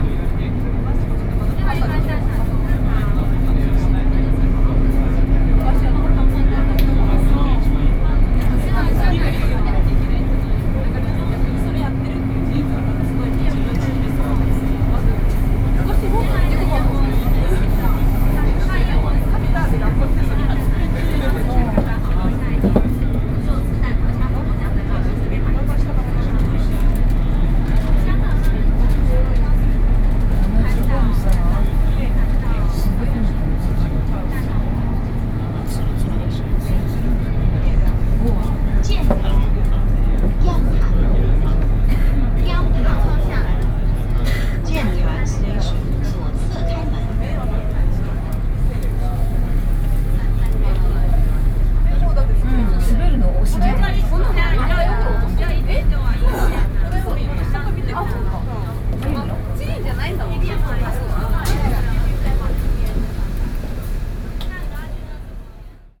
中山區劍潭里, Taipei City - soundmap20121117
Conversation sound on the MRT, sony pcm d50+OKM2